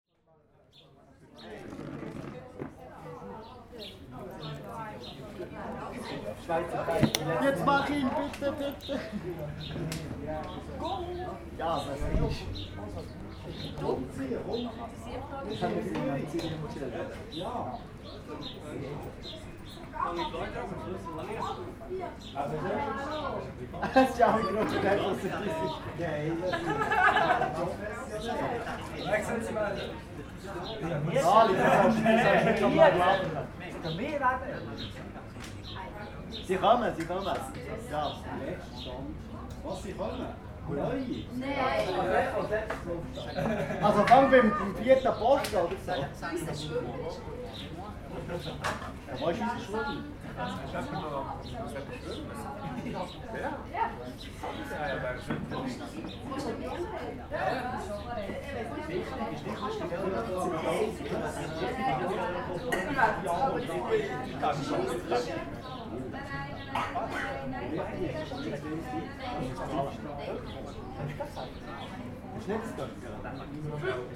Marzilibad, Kaffee mit Badgeplauder
Marzilibad, Bern, Kaffee, Gelato für Kinder, Geplauder über kaltes Wasser der Aare
26 June, ~17:00